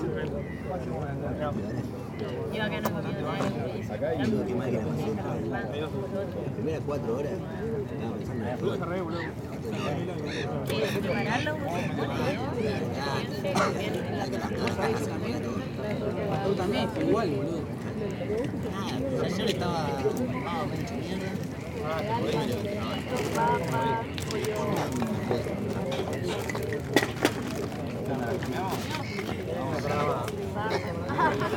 Near a big lake, a group of Spanish tourists is drinking and screws around the water.
Gl. Kongevej, København, Denmark, 17 April 2019, 19:00